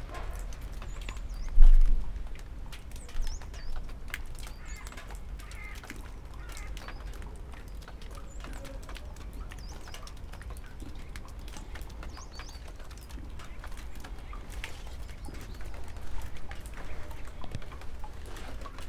Piramida, Maribor, Slovenia - drops onto things
drops (and brick) dripping from a damaged old roof onto different kind of things - pipes, stones, jars, toad - human and sheep voices from afar